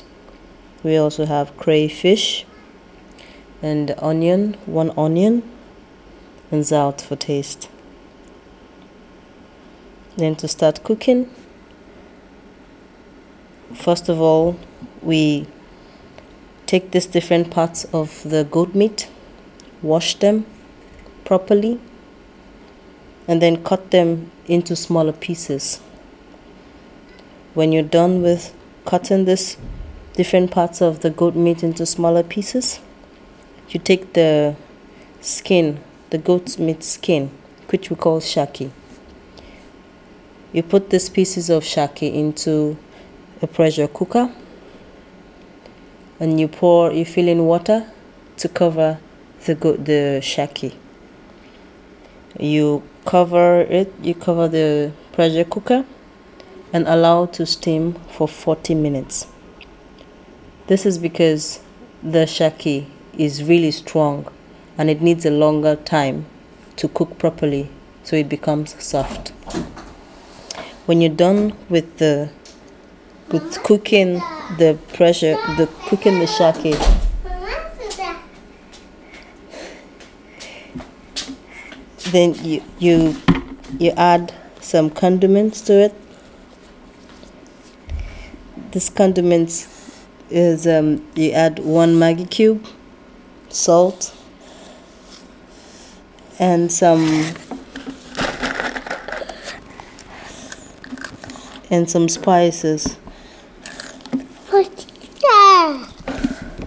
{
  "title": "Kinderbetreuung of AfricanTide - Goat Meat Pepper soup-Nigerian style",
  "date": "2017-05-13 13:07:00",
  "description": "Towards the end of the work day, Chinelo sits to record a recipe for her Nigerian Goat meat pepper soup and is suddenly interrupted...",
  "latitude": "51.51",
  "longitude": "7.41",
  "altitude": "87",
  "timezone": "Europe/Berlin"
}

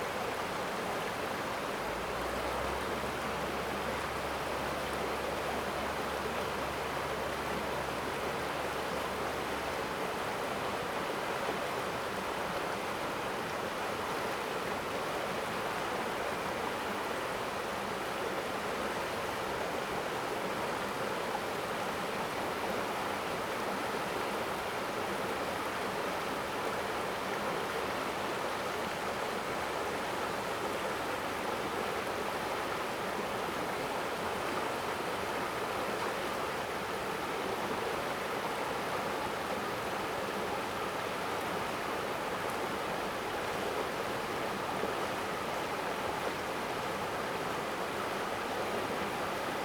{
  "title": "南河, Nanzhuang Township, Miaoli County - stream",
  "date": "2017-09-15 09:31:00",
  "description": "stream, Zoom H2n MS+XY",
  "latitude": "24.57",
  "longitude": "120.98",
  "altitude": "279",
  "timezone": "Asia/Taipei"
}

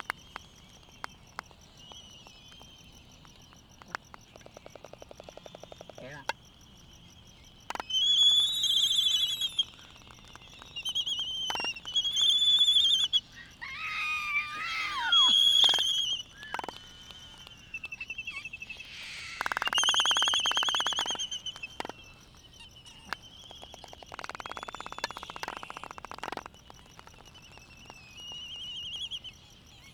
{
  "title": "United States Minor Outlying Islands - Laysan albatross dancing ...",
  "date": "2012-03-14 04:38:00",
  "description": "Laysan albatross dancing ... Sand Island ... Midway Atoll ... sky moos ... whinnies ... yaps ... whistles ... whinnies ... the full sounds of associated display ... lavalier mics either side of a furry table tennis bat used as a baffle ... calls from bonin petrels ... warm with a slight breeze ...",
  "latitude": "28.22",
  "longitude": "-177.38",
  "altitude": "9",
  "timezone": "Pacific/Midway"
}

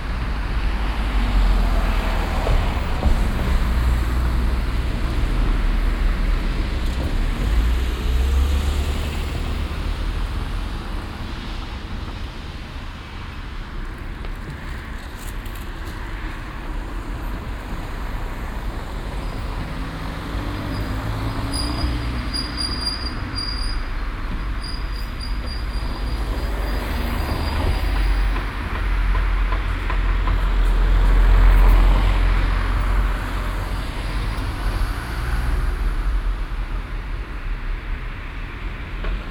{
  "title": "refrath, in der auen, strassenschwellen, verkehr",
  "description": "morgendlicher strassenverkehr an ampel über 2 strassenschwellen\nsoundmap nrw - social ambiences - sound in public spaces - in & outdoor nearfield recordings",
  "latitude": "50.95",
  "longitude": "7.10",
  "altitude": "67",
  "timezone": "GMT+1"
}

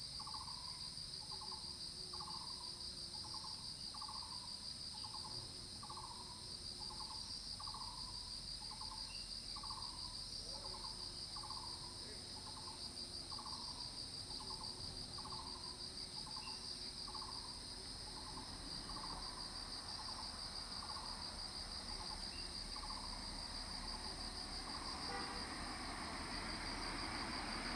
{
  "title": "Zhonggua Rd., Puli Township 桃米里 - Insect and Bird sounds",
  "date": "2016-07-13 06:48:00",
  "description": "Insect sounds, Bird sounds, Traffic Sound\nZoom H2n MS+ XY",
  "latitude": "23.95",
  "longitude": "120.92",
  "altitude": "574",
  "timezone": "Asia/Taipei"
}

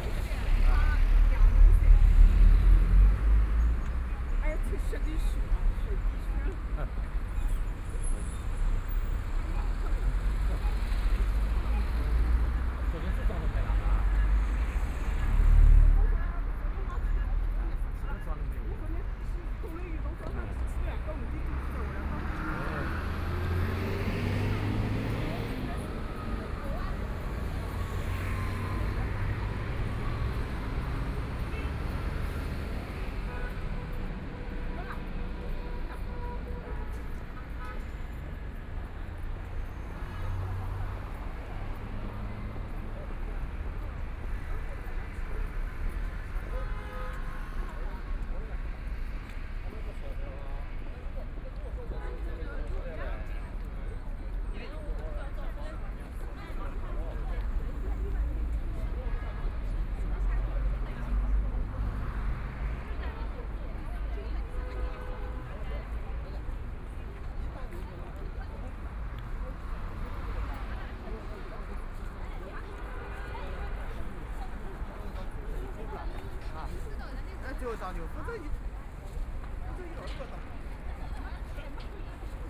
{
  "title": "Zhangyang, Shanghai - Noon time",
  "date": "2013-11-21 12:13:00",
  "description": "Noon time, in the Street, Walking through a variety of shops, Road traffic light slogan sounds, Traffic Sound, Binaural recording, Zoom H6+ Soundman OKM II",
  "latitude": "31.23",
  "longitude": "121.51",
  "altitude": "23",
  "timezone": "Asia/Shanghai"
}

